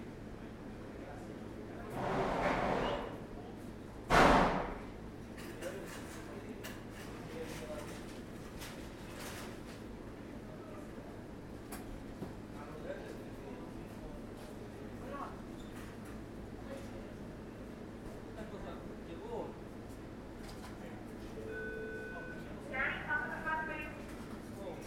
Woodside, Queens, NY, USA - Zahner's Cash And Carry Restaurant Supply
In the cutlery aisle of Zahner's Cash and Carry